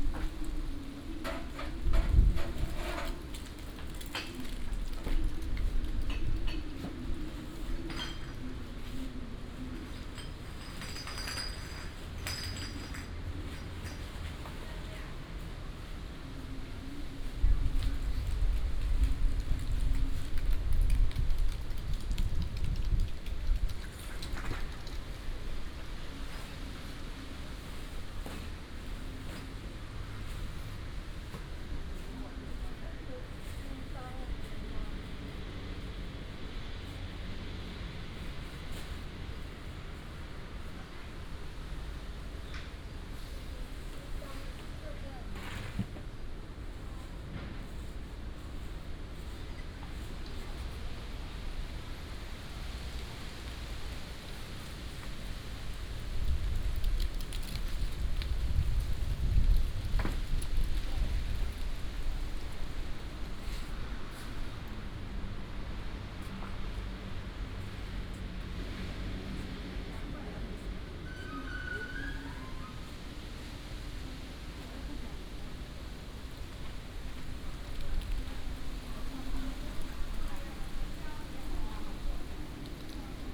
長和公園, Hsinchu City - Walking in the Park
Walking in the Park, wind and Leaves, Dog, Binaural recordings, Sony PCM D100+ Soundman OKM II